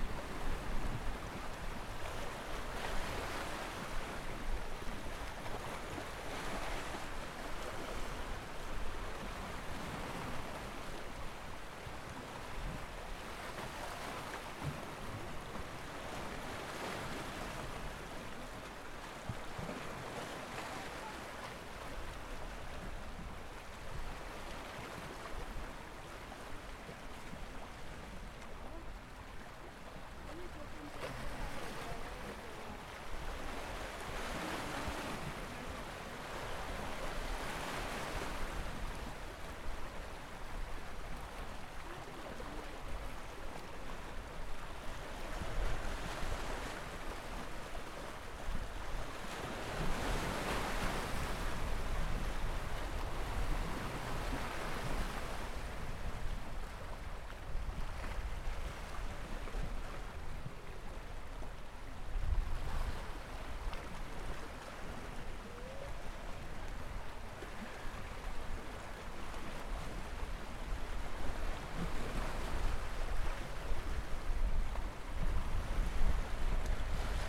Old Town, Constanța, Roumanie - dancing on the black sea
Quand la vague devient danseuse et qu'elle transporte dans ses mouvements les rêves d'un voyageur
Constanța, Romania, 28 August, 12:00